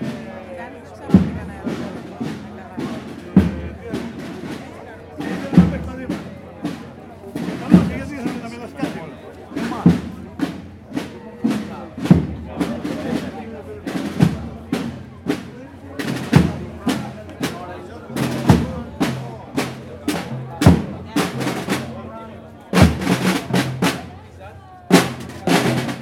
Leof. Alavanou, Tinos, Greece - Band playing at the litany
Anniversary of the Vision of Saint Pelagia.
People talking on the street while waiting for the litany of Saint Pelagia to approach. While it approaches and it goes by, we hear the band playing wind instruments and percussion and then we hear again people talking. Recorded with Zoom by the soundscape team of E.K.P.A. university for KINONO Tinos Art Gathering.